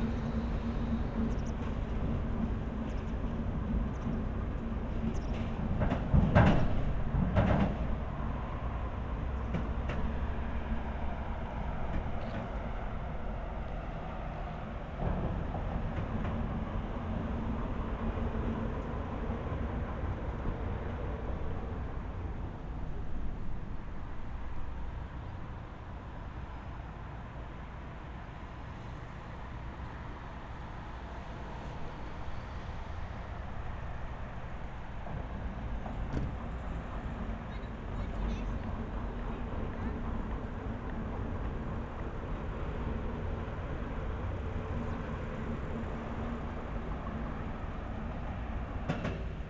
Küstrin, bridge traffic
traffic on bridge over river oder, border between germany and poland